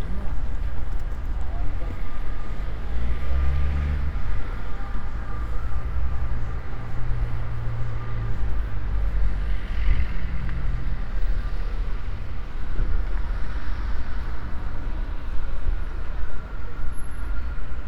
METS-Conservatorio Cuneo: 2019-2020 SME2 lesson1C - “Walking lesson SME2 in three steps: step C”: soundwalk
METS-Conservatorio Cuneo: 2019-2020 SME2 lesson1C
“Walking lesson SME2 in three steps: step C”: soundwalk
Thursday, October 1st 2020. A three step soundwalk in the frame of a SME2 lesson of Conservatorio di musica di Cuneo – METS department.
Step C: start at 10:57 a.m. end at 11:19, duration of recording 22’19”
The entire path is associated with a synchronized GPS track recorded in the (kmz, kml, gpx) files downloadable here:
Piemonte, Italia, 1 October 2020, 10:57